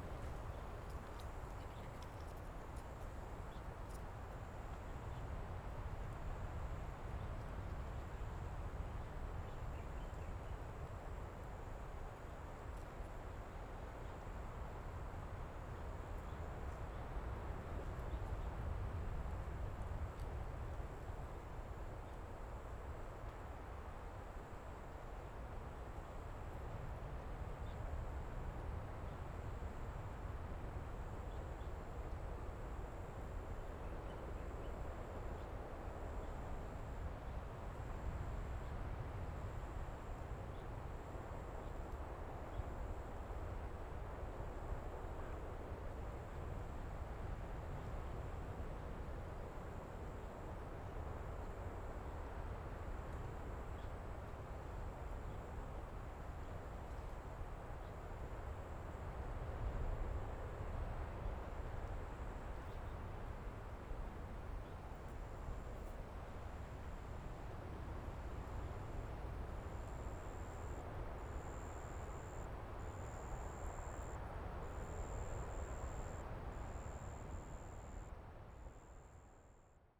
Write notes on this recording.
Casuarinaceae, The sound of the wind moving the leaves, Sound of the waves, Zoom H6 M/S